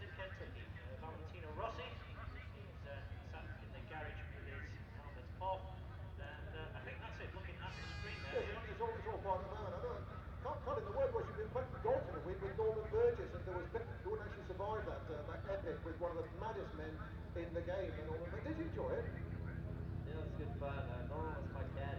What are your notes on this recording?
British Motorcycle Grand Prix 2005 ... free practice two ... part one ... the 990cc era ... one point stereo mic to minidisk ...